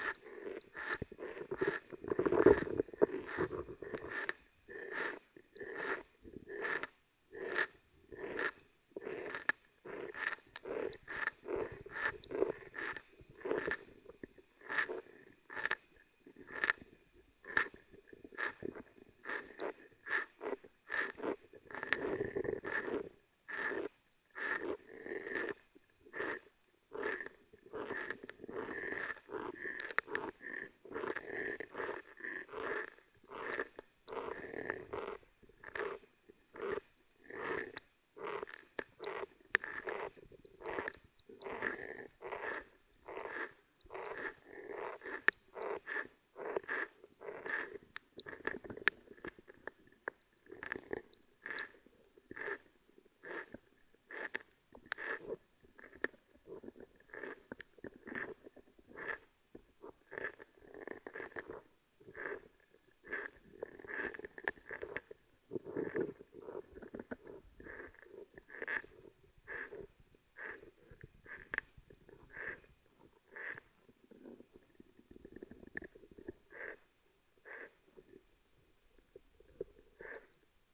Utena, Lithuania, swamp underwater
hydrophone recording of autumnal swamp